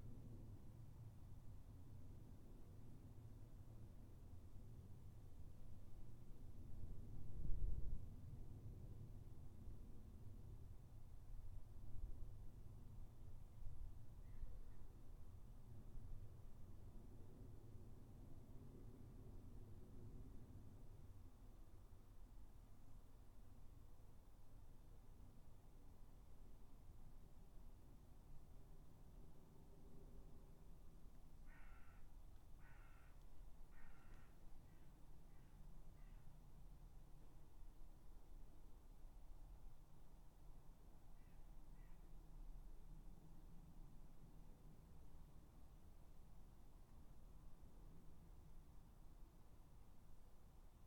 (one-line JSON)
{"title": "Dorridge, West Midlands, UK - Garden 9", "date": "2013-08-13 11:00:00", "description": "3 minute recording of my back garden recorded on a Yamaha Pocketrak", "latitude": "52.38", "longitude": "-1.76", "altitude": "129", "timezone": "Europe/London"}